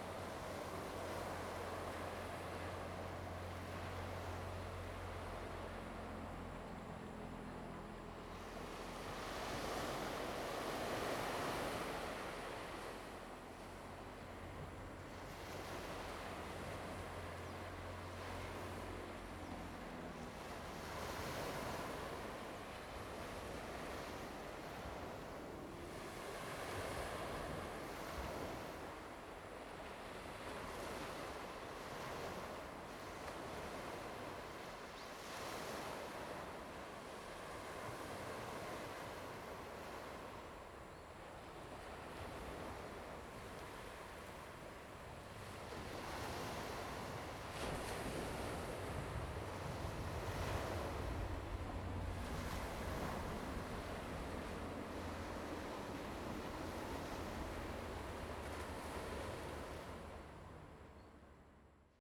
Imowzod, Ponso no Tao - Aircraft flying through
Aircraft flying through, Traffic Sound
Zoom H2n MS +XY